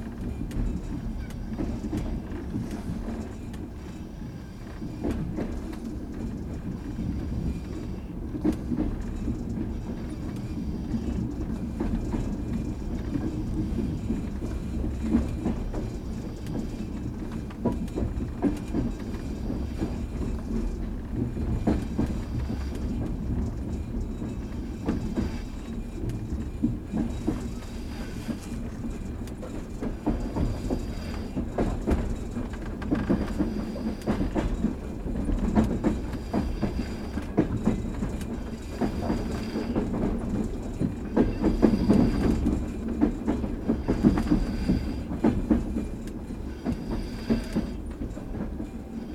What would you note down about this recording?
Night-journey on the train 601Л from L'viv to Chop, platskartny (3rd class bunks), binaural recording.